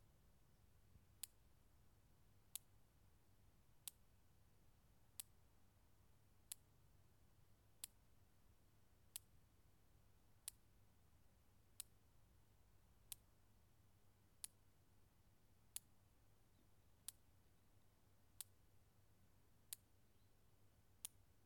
Folkhögskolevägen, Nyland, Sverige - Electrical fence
Electrical fence and insects and birds.
Västernorrlands län, Norrland, Sverige, 5 September 2020